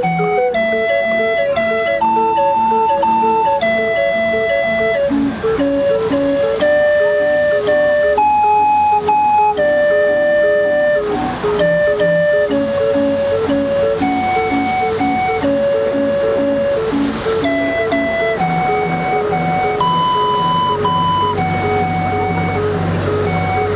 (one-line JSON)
{"title": "Weihnachtsmarkt Resselpark, Wien, Österreich - der unbekannte & ungenannte leierkastenmann", "date": "2012-11-18 20:41:00", "description": "an unauthorized organ grinder played at the edge of the christmas market (pcm recorder olympus ls5)", "latitude": "48.20", "longitude": "16.37", "altitude": "175", "timezone": "Europe/Vienna"}